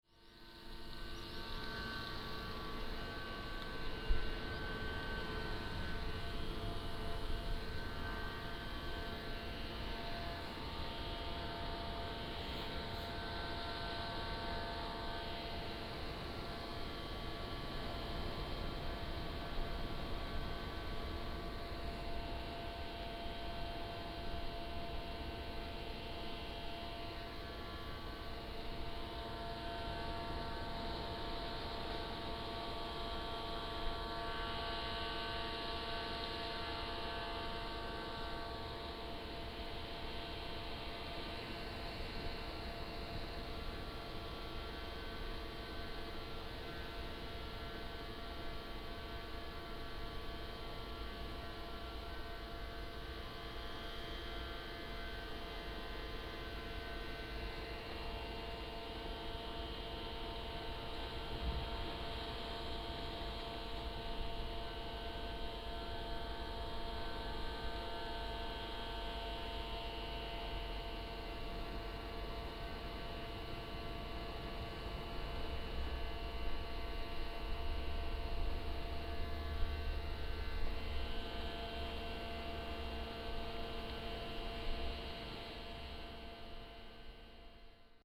Beigan Township, Taiwan - Small reservoirs
Small reservoirs, next to the Water purification plant, Sound of the waves
馬祖列島 (Lienchiang), 福建省 (Fujian), Mainland - Taiwan Border, 13 October 2014